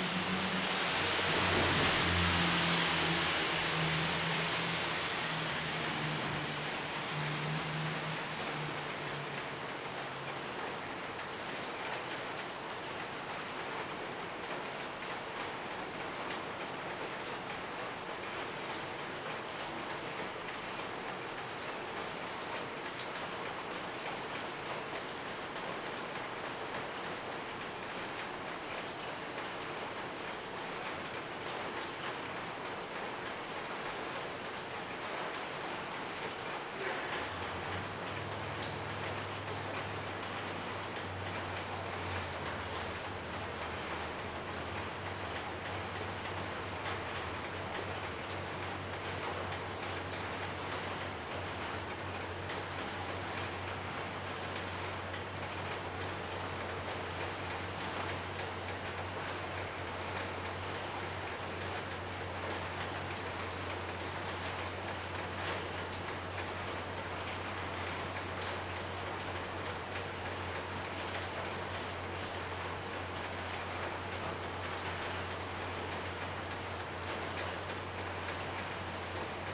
大仁街, Tamsui District - raindrop
early morning, rain, raindrop, Traffic Sound
Zoom H2n MS+XY